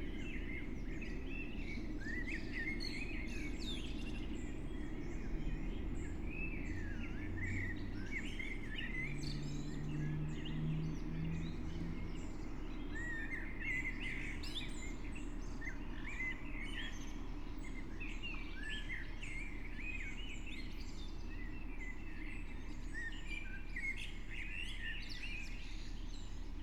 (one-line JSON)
{"title": "Brno, Lužánky - park ambience", "date": "2021-06-27 04:00:00", "description": "04:00 Brno, Lužánky\n(remote microphone: AOM5024/ IQAudio/ RasPi2)", "latitude": "49.20", "longitude": "16.61", "altitude": "213", "timezone": "Europe/Prague"}